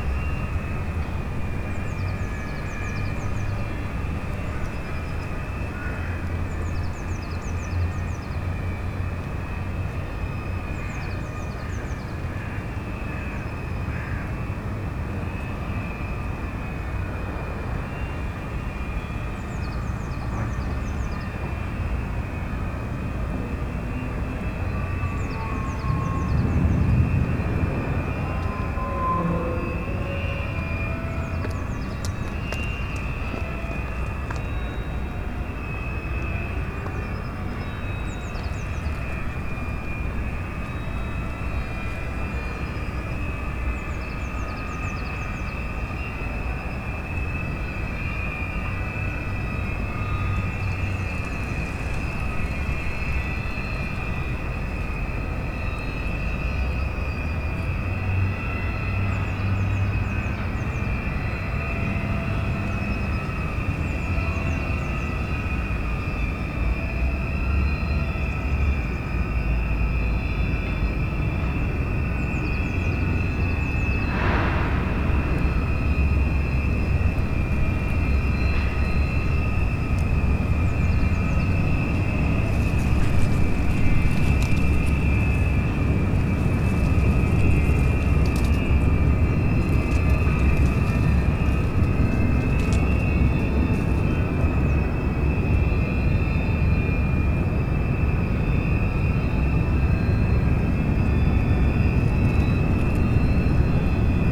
berlin: plänterwald - the city, the country & me: promenade
squeaking sound of the ferris wheel in the abandonned spree park, towboat moves empty coal barges away, crows
the city, the country & me: february 8, 2014